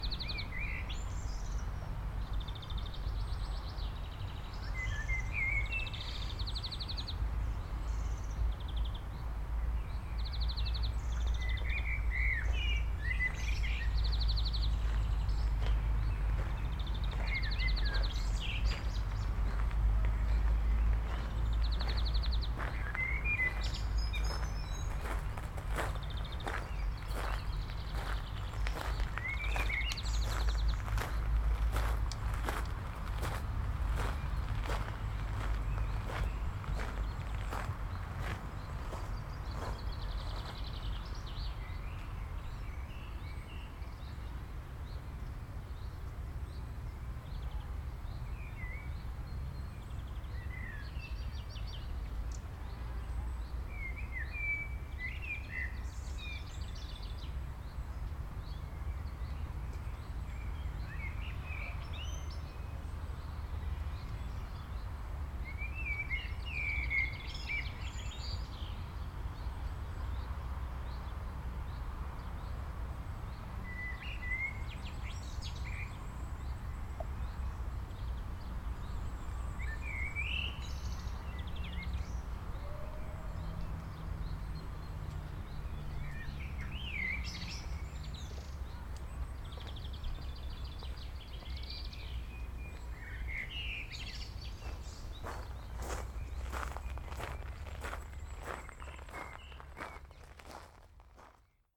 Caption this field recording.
I put the zoom mic. in the center of the small square of the cemetery where you can hear the birds in the trees though the skies are grey.